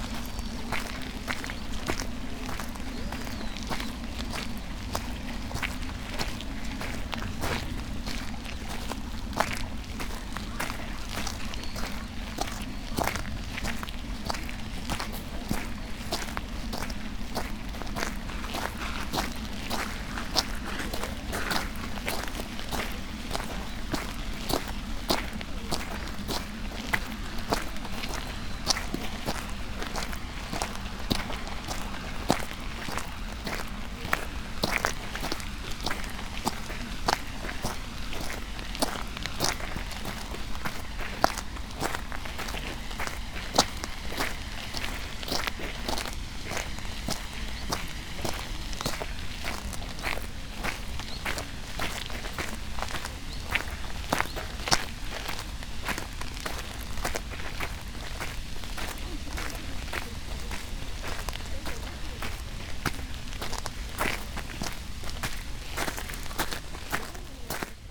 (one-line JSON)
{"title": "gravel path, Shugakuin Imperial Villa, Kyoto - walking", "date": "2014-11-01 15:53:00", "description": "near water canal\nrhythm of steps", "latitude": "35.05", "longitude": "135.80", "altitude": "129", "timezone": "Asia/Tokyo"}